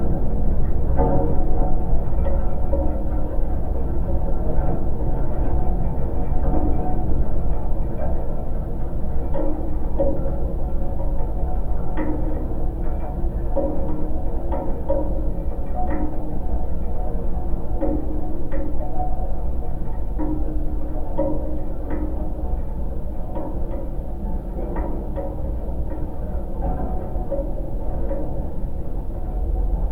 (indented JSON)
{
  "title": "Vasaknos, Lithuania, fish feeder",
  "date": "2020-11-07 14:30:00",
  "description": "windy day. geophone on monstrous metallic fish feeder",
  "latitude": "55.69",
  "longitude": "25.81",
  "altitude": "102",
  "timezone": "Europe/Vilnius"
}